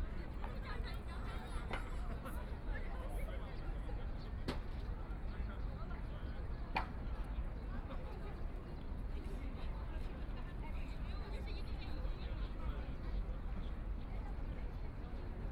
Lujiazui, Pudong New Area - in the Park
In the park plaza, Tourists from all over, Office workers lunch break, Binaural recording, Zoom H6+ Soundman OKM II